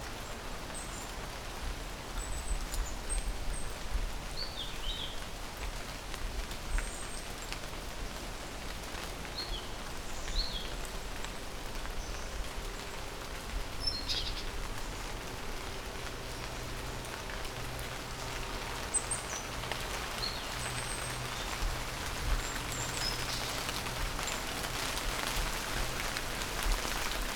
Sintra, trail towards Castelo dos Mouros - rain in the forest
heavy rain in the forest + birds. construction works sounds in the distance
Sintra, Portugal, 28 September 2013